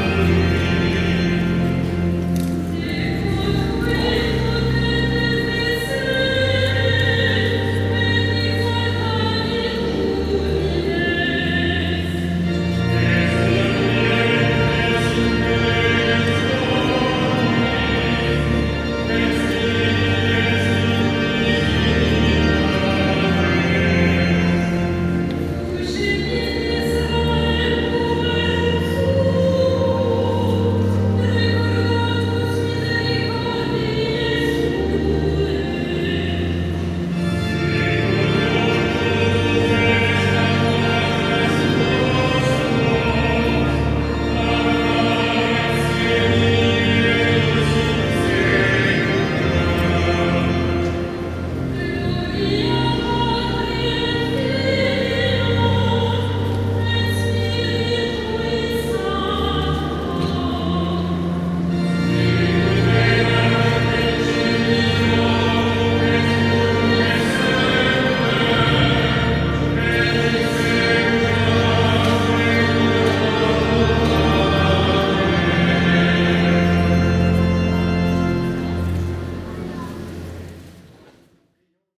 Fragment of a mass in de Cathédrale de Notre Dame (3). Binaural recording.
Paris, France, 10 September 2010